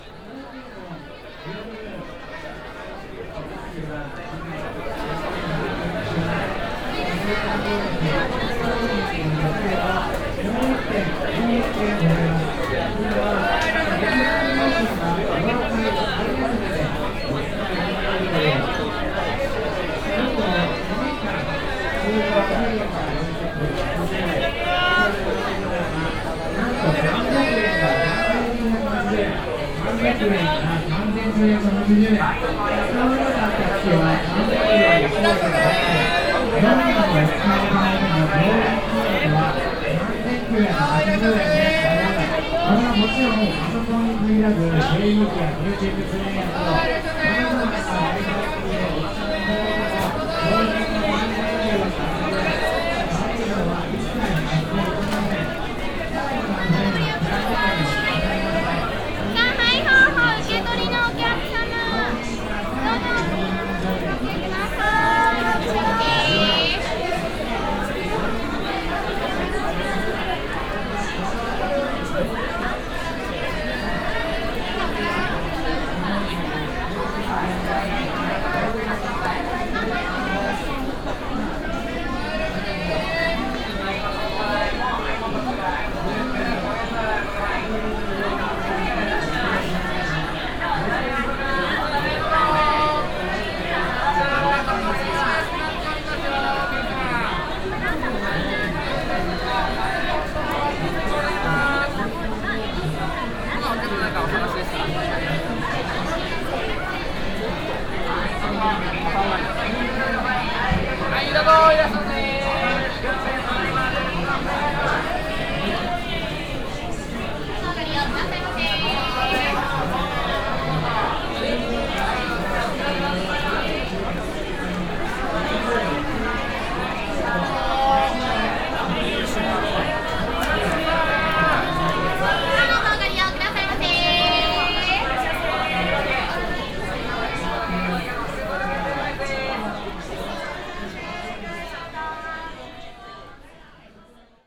tokyo, akihabara, electronic store
inside a big store for all kind of electronic devices - anouncements and people crossing
international city scapes - social ambiences and topographic field recordings
27 July 2010, ~15:00